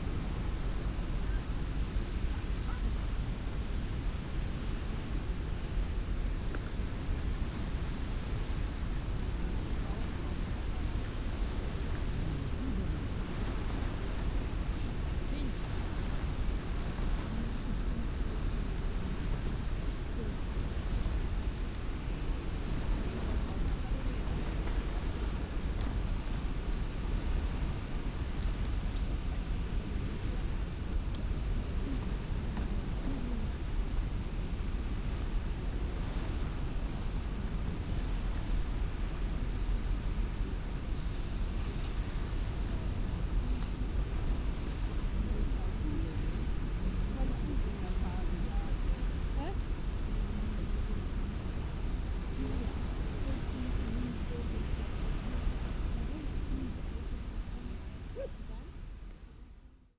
Schillig, Wangerland - wind in grass
wind in grass, near the shore
(Sony PCM D50, DPA4060)